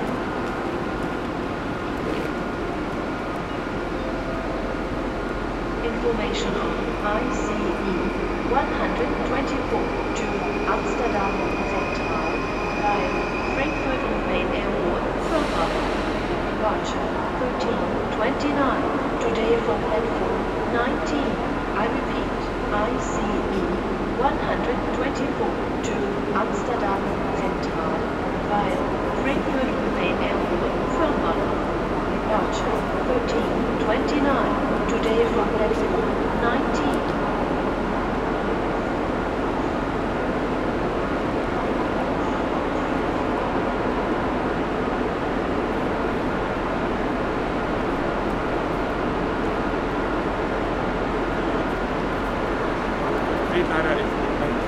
While during the lockdown no trains left for Amsterdam Centraal without any anouncement, on this day a train is leaving. A man is asking for a Euro, he has as he says only 72 Euro but needs 73, he then asks other people.